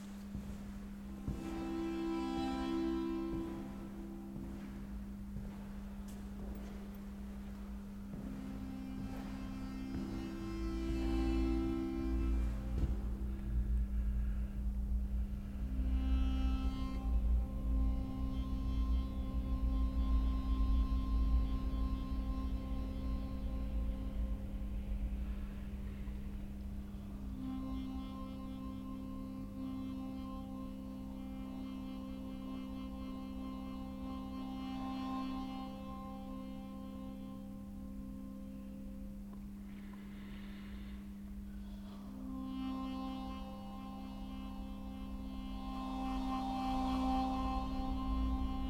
Masarykovo Nám., Jihlava, Česko - uvnitř radnice
Jihovýchod, Česko, 27 October, 11:16